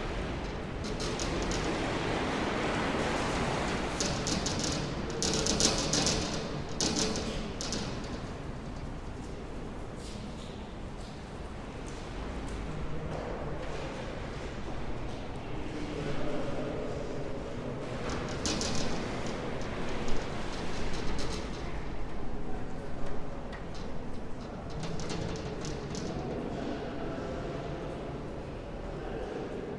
wind blowing plastic and windows at the former AEG factory in Nürnberg